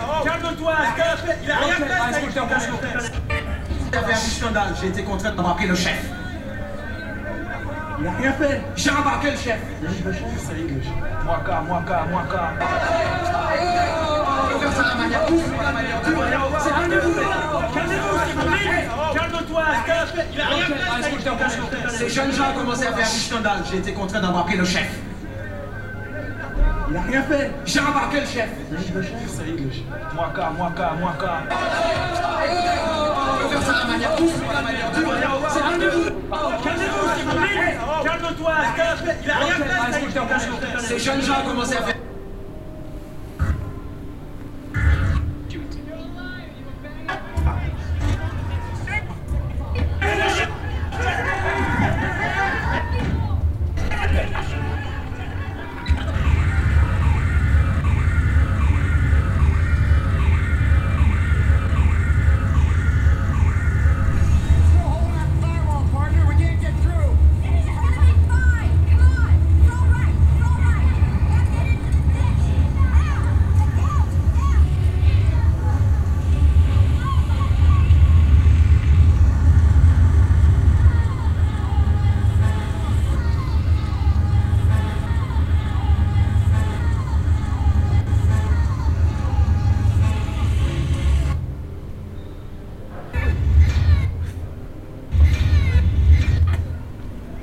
inside a round circle media installation that allows the visitor to select 3 D animated screen scenes and follow picture lines that have certain emotional content by picture zapping thru a big international tv scene archive
soundmap d - topographic field recordings and social ambiences